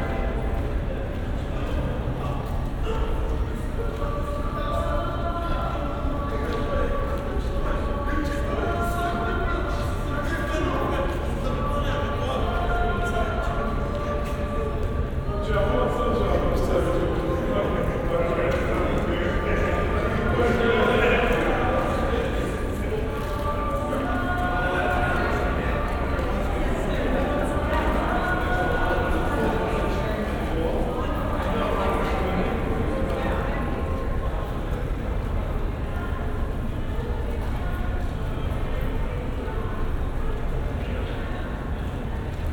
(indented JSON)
{
  "title": "Montreal: Square-Victoria Station (rotunda) - Square-Victoria Station (rotunda)",
  "date": "2009-02-20 17:30:00",
  "description": "equipment used: Olympus LS-10 & OKM Binaurals\nSitting in the middle of the rotunda at the foot of the escalator inside the St-Jacques entrance to the Square-Victoria Metro Station. Due to its round shape, the space has a distinct echo that colors the sounds taking place inside and traps the sounds taking place outside. The woman singing is in a long hall about 40 meters away from the rotunda.",
  "latitude": "45.50",
  "longitude": "-73.56",
  "altitude": "25",
  "timezone": "America/Montreal"
}